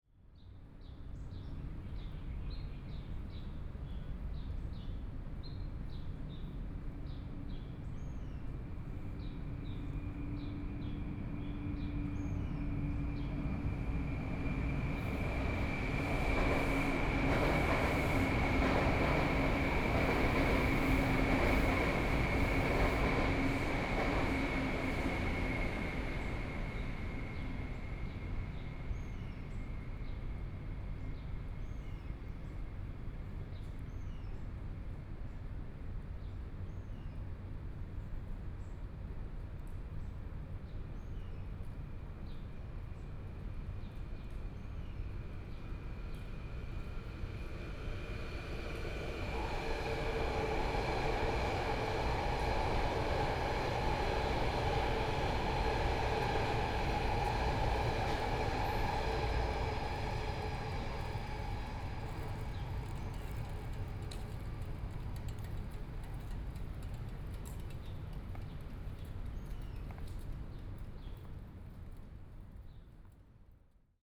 MRT train
Binaural recordings
Sony PCM D100+ Soundman OKM II
Taipei City, Taiwan